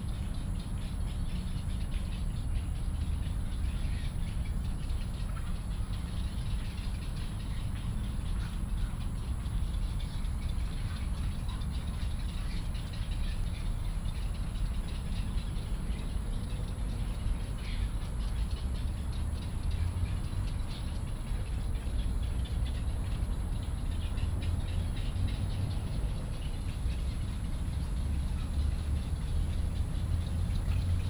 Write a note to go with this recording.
The Ecological Pool in the centre of Daan Forest Park, in the Park, Bird calls, Doing clapping motion, Environmental Traffic Sound, Hot weather